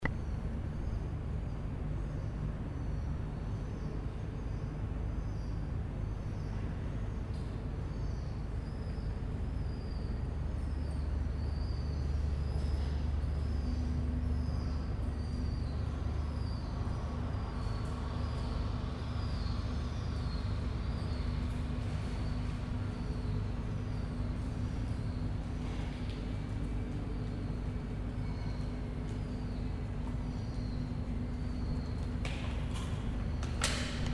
Shed 10, Princes Wharf, Auckland, New Zealand, Warehouse, Pier, Waterfront, AUT
New Zealand, 28 September 2010, 14:00